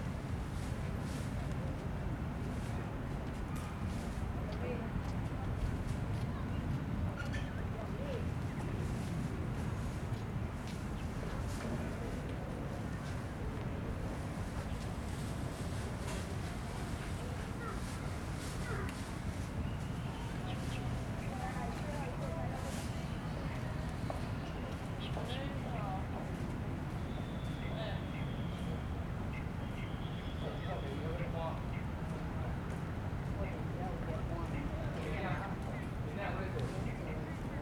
{
  "title": "Zuoying District, Kaohsiung - Evening in the park",
  "date": "2012-02-25 17:48:00",
  "description": "Square in front of the temple, Sony ECM-MS907, Sony Hi-MD MZ-RH1",
  "latitude": "22.67",
  "longitude": "120.31",
  "altitude": "7",
  "timezone": "Asia/Taipei"
}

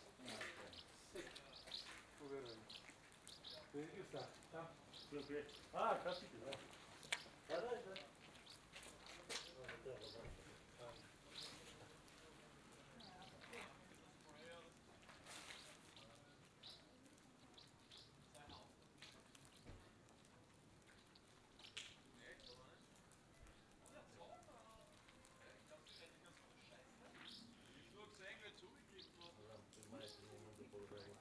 {
  "title": "Buzludzha, Bulgaria, Drone - In front of Buzludzha - monologue binaural",
  "date": "2019-07-15 15:47:00",
  "description": "A security man is reasoning on Bulgarian about the building and the state of the society in Bulgarian. The swallows are singing, some cars in the background of austrian tourists... this recording is made more or less at the same time like the other one with binoural in ear microphones...",
  "latitude": "42.74",
  "longitude": "25.39",
  "timezone": "Europe/Sofia"
}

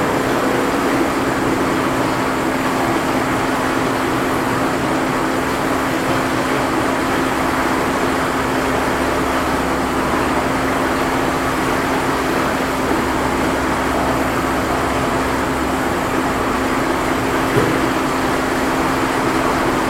{
  "title": "Levee Gatewell, Valley Park, Missouri, USA - Levee Gatewell",
  "date": "2020-08-27 18:35:00",
  "description": "Meramec Levee Gatewell. A cocktail of sound - rushing water, concrete plant hum, katydids, reflected highway traffic. The gatewell is like a cocktail shaker. Recording device microphones aimed at its strainer.",
  "latitude": "38.55",
  "longitude": "-90.48",
  "altitude": "133",
  "timezone": "America/Chicago"
}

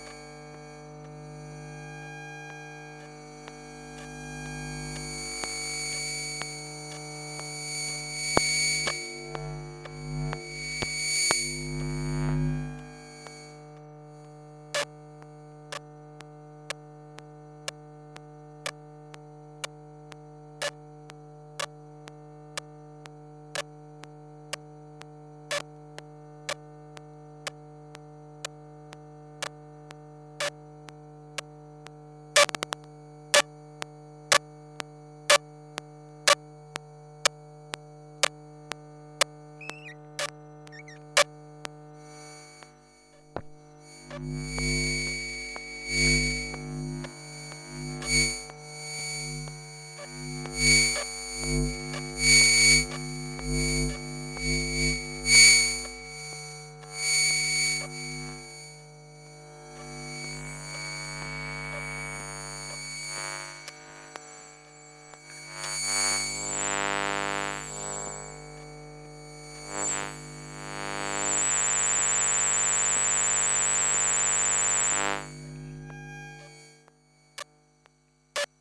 {
  "title": "Rue Luc Breton, Besançon, France - borne voiture - rue breton",
  "date": "2018-06-05 15:20:00",
  "description": "micro Elektrosluch 3+\nFestival Bien urbain\nJérome Fino & Somaticae",
  "latitude": "47.24",
  "longitude": "6.02",
  "altitude": "247",
  "timezone": "Europe/Paris"
}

